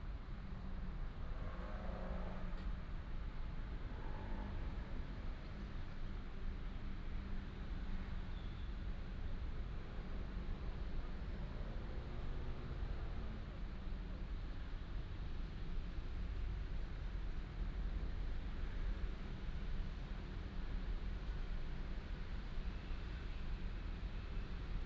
Fuqian Rd., Miaoli City - Next to the tracks
Next to the tracks, The train runs through